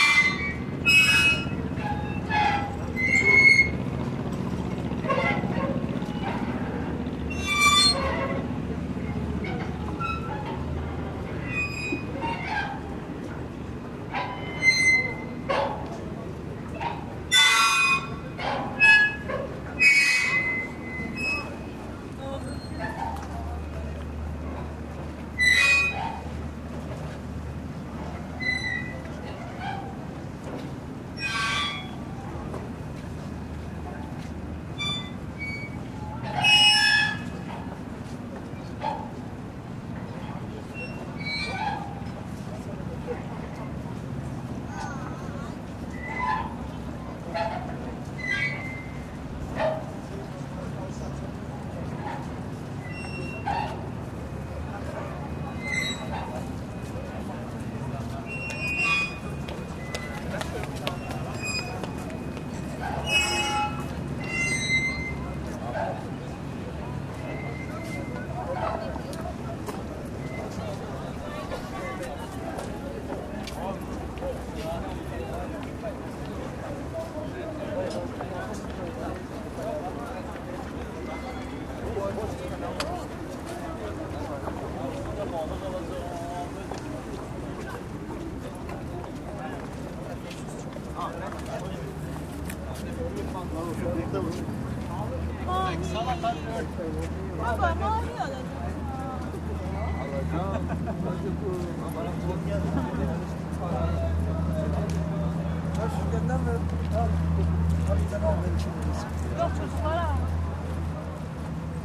Symphony of a winter to come, Istanbul, Eminönü
The last ferryboat of the day has vehicled the passengers from work back up the bosphorus, leaving the pontoon alone, floating on black water. the wind is harsh and cold, the winter is near, and so the pontoon sings...
18 October 2010